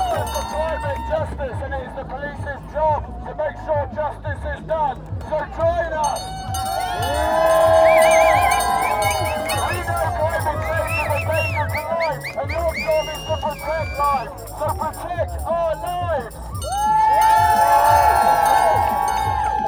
Belvedere Rd, South Bank, London, UK - Extinction Rebellion: Climate justice, Power to the People chant
Crowds chants 'Climate Justice' 'Power to the people' while arrests of the demonstrators sitting down to block the bridge take place. People are cheered and clapped as they are taken to the nearby police vans.